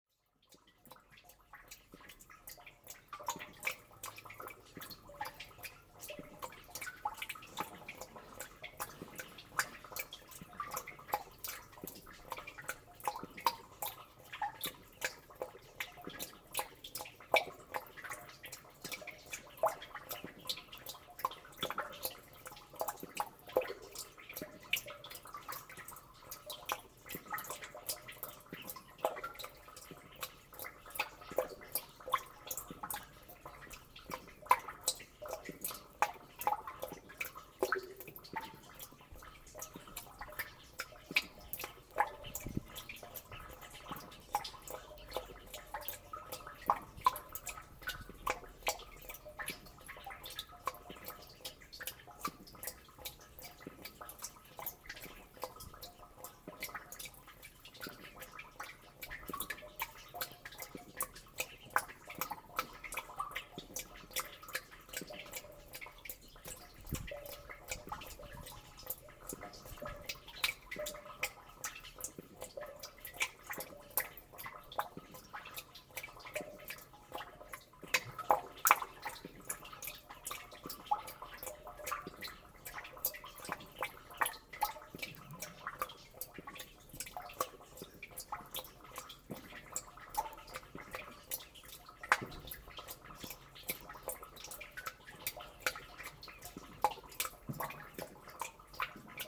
Utena, Lithuania, rain sewer well
rhytmic water dripping in rain drenage well. recorded with Instamic microphone